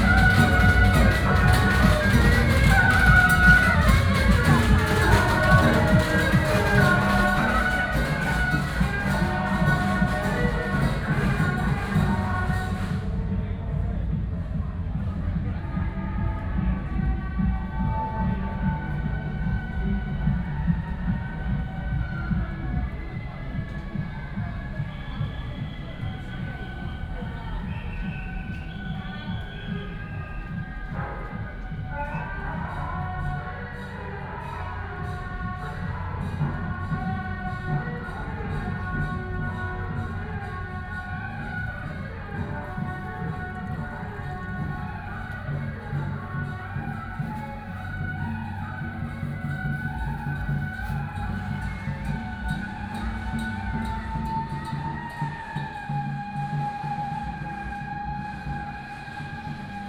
Chongjian St., Tamsui Dist., New Taipei City - Walking in the old alley
Walking in the old alley, temple fair
New Taipei City, Taiwan